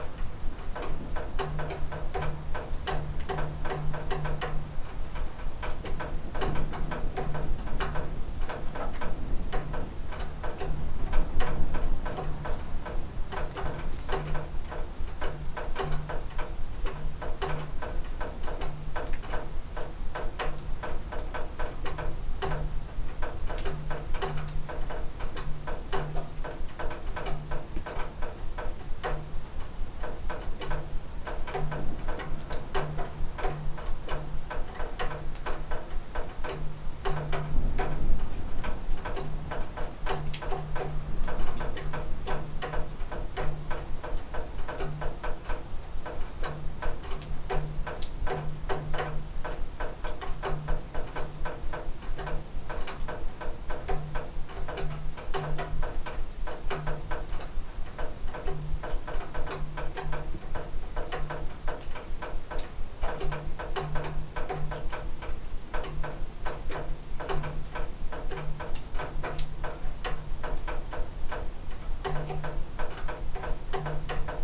Šlavantai, Lithuania - House porch after the rain
Water dripping around a house porch after the rain. Recorded with ZOOM H5.
17 December 2020, 11:00